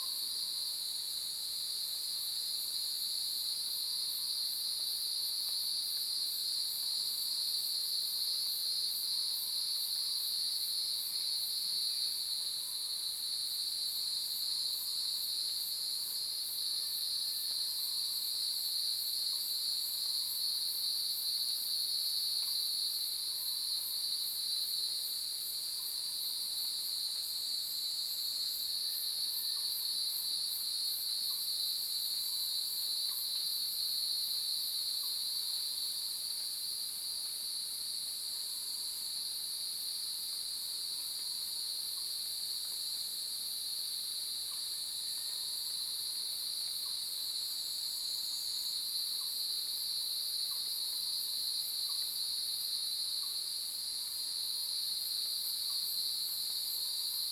華龍巷, 魚池鄉五城村, Taiwan - Cicada and Bird sounds
Cicada sounds, Bird sounds
Zoom H2n Spatial audio
Nantou County, Yuchi Township, 華龍巷43號, 14 July 2016, ~06:00